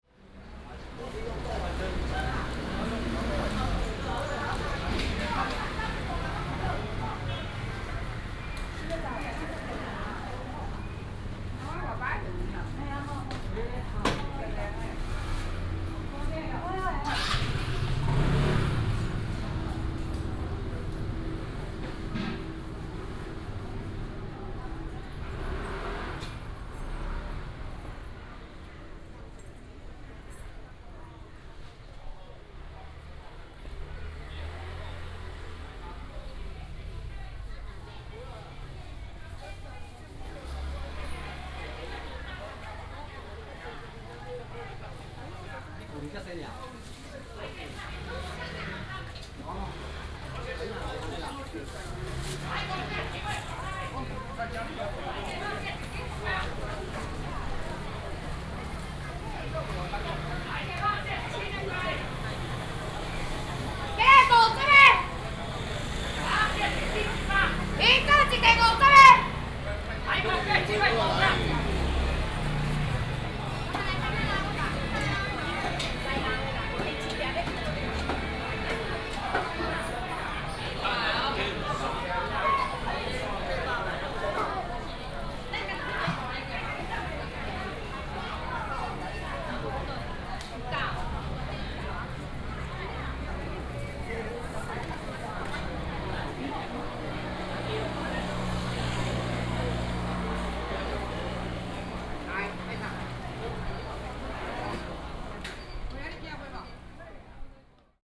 Sec., Changping Rd., Beitun Dist., Taichung City - Walking in a small alley
Walking through the market, Walking in a small alley
22 March, ~10:00